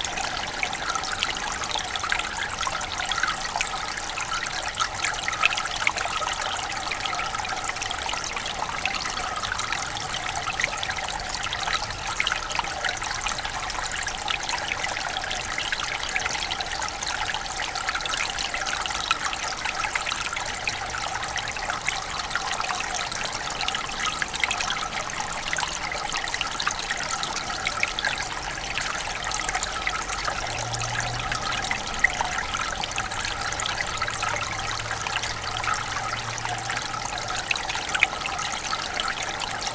Hoellegrundsbach near Bonaforth, Deutschland - 140809HoellegrundsbachMitte
placing the microphone on a stone in the stream, which doesn't has much water because of summer. Rode NT4 and Fostex FR2
Hann. Münden, Germany, August 2014